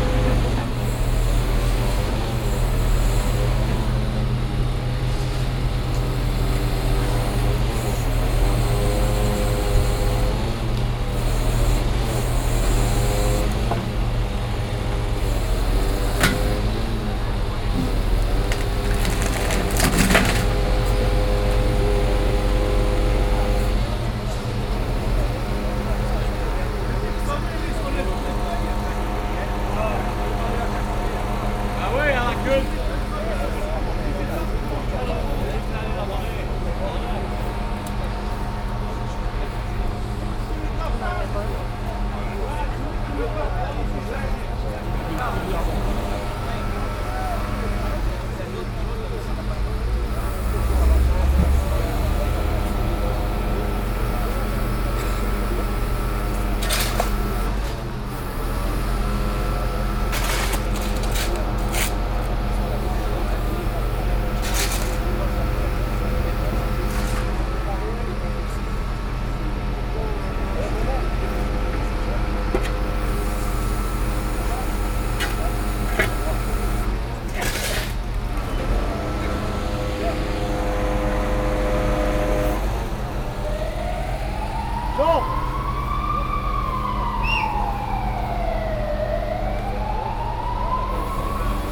Brussels, Place de Moscou, Real Democracy Now Camp, unmounting the camp.
Saint-Gilles, Belgium, 17 June